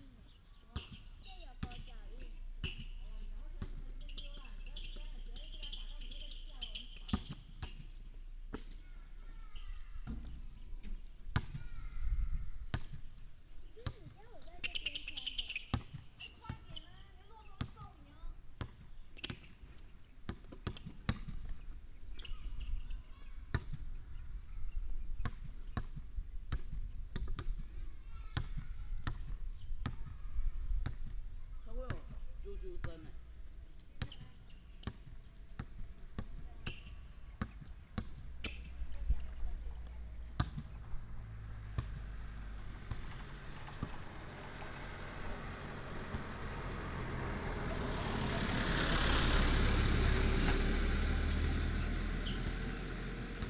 文林國中文隆分部, Tongluo Township - Small village
Outside the school, traffic sound, play basketball, Small village, Binaural recordings, Sony PCM D100+ Soundman OKM II